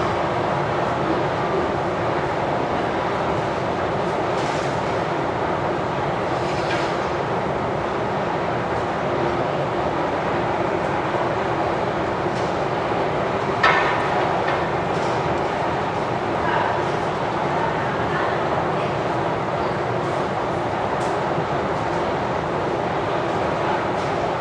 Ленинский пр-т., Москва, Россия - Leninsky Prospekt metro station
At the exit (inside) of the lobby of the Leninsky Prospekt metro station. You can hear the esclator working, the turnstiles opening, the train coming, people talking to each other, the loudspeaker asks everyone to be careful.